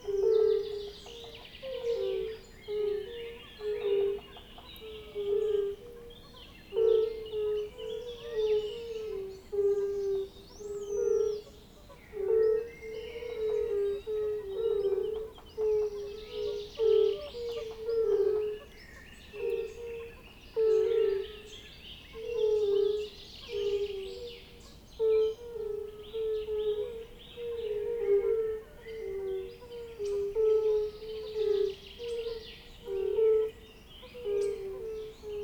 CHKO Dunajské luhy - Bombina bombina
Enchanting calls of Bombina bombina at dusk. Thanks to Námer family and Andrej Chudý.
Recorded with Sony PCM-D100